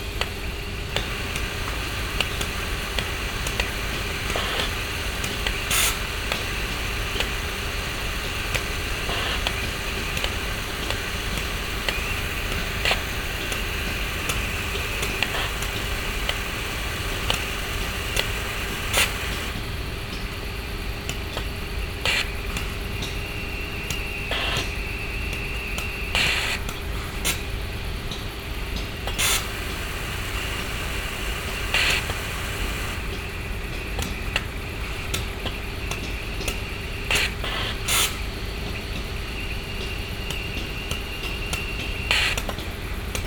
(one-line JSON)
{"title": "Usine Prayon, Amay, steam lines - Usine Prayon S.A., Amay, steam lines", "date": "2008-11-13 01:28:00", "description": "Large chemical factory. Moisture traps in steam lines spitting out the collected water. Binaural. Zoom H2 with OKM ear mics.", "latitude": "50.57", "longitude": "5.39", "altitude": "71", "timezone": "Europe/Berlin"}